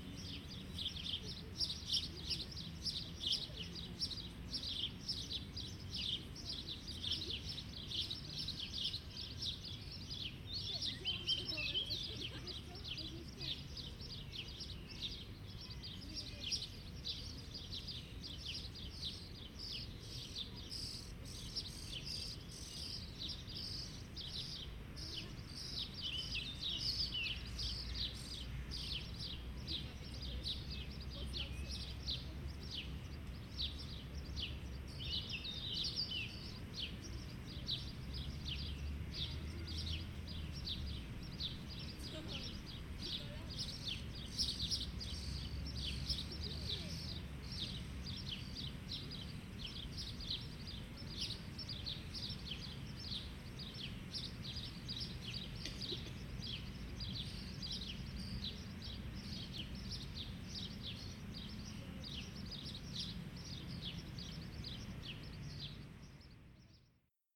Ogród Saski, Warszawa, Polska - A glade in the Saxon Garden
A quiet afternoon in the Saxon Garden in Warsaw - chirping sparrows in the bushes nearby - people lying on the grass - distant cars and trams -
Recording made with Zoom H3-VR, converted to binaural sound
województwo mazowieckie, Polska, 11 May 2022, ~17:00